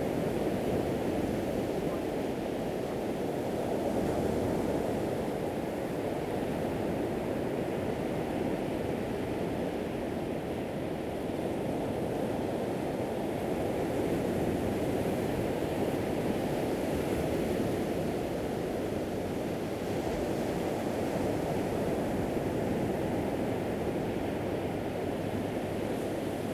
大濱溪, Chenggong Township - At the seaside
sound of the waves, At the seaside
Zoom H2n MS+XY